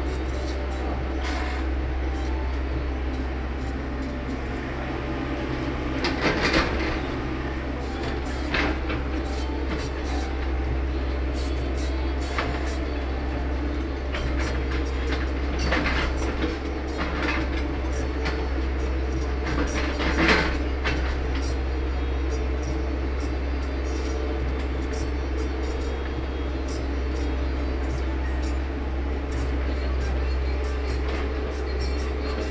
Saundatti, Near Khadi Kendra, Enfer mecanique
Karnataka, India, 23 October